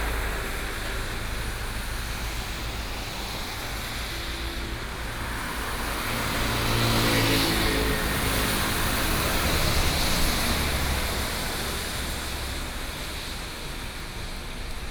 永吉, 西部濱海公路 Cigu Dist., Tainan City - Highway after rain
Traffic sound, Highway after rain
Qigu District, 西部濱海公路, May 2018